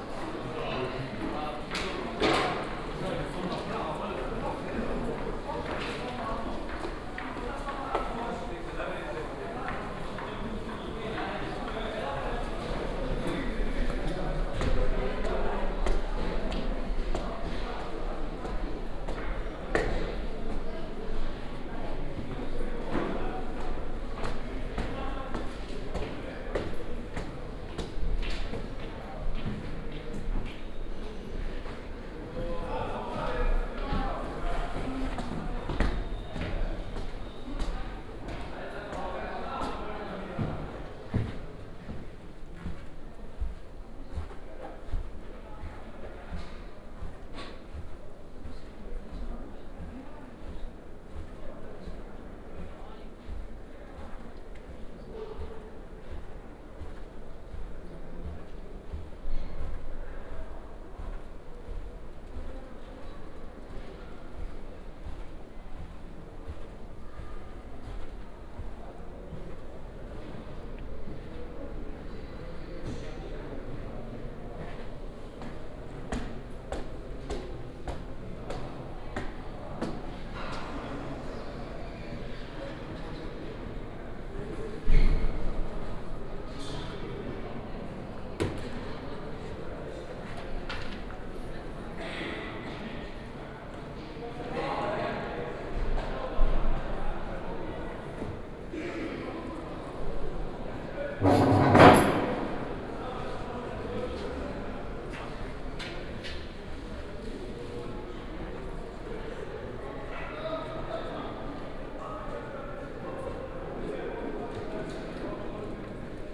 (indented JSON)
{
  "title": "Maribor, university",
  "date": "2011-11-17 12:00:00",
  "description": "maribor university, tech dept., ambience, walk, binaural",
  "latitude": "46.56",
  "longitude": "15.64",
  "altitude": "273",
  "timezone": "Europe/Ljubljana"
}